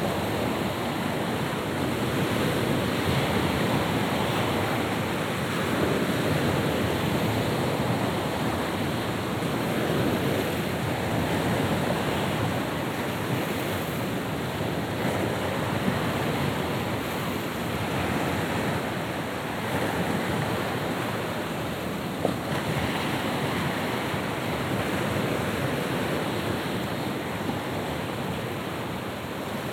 Storm. The howling wind.
Штормит, вой ветра.